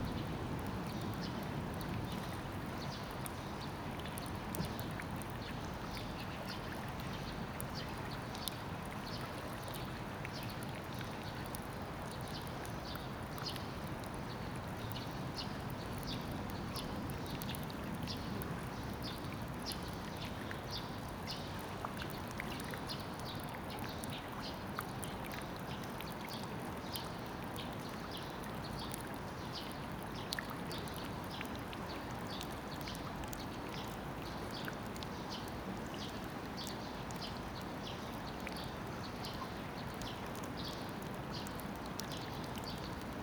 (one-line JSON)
{"title": "대한민국 서울특별시 서초구 양재동 126-1 :Yangjaecheon, Summer, Underpass Sewage - Yangjaecheon, Summer, Underpass Sewage", "date": "2019-07-27 14:40:00", "description": "A recording at Yangjaecheon stream underpass.\nbirds chirping, rain gutter sound\n여름 비온뒤 양재천 굴다리, 새소리, 빗물받이", "latitude": "37.48", "longitude": "127.04", "altitude": "21", "timezone": "Asia/Seoul"}